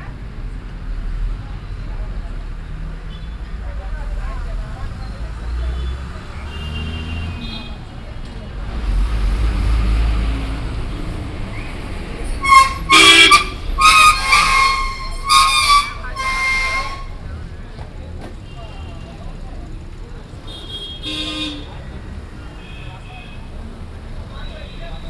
India, Karnataka, road traffic, bus, rickshaw, binaural
Saundatti, Karnataka, India, 22 January, ~20:00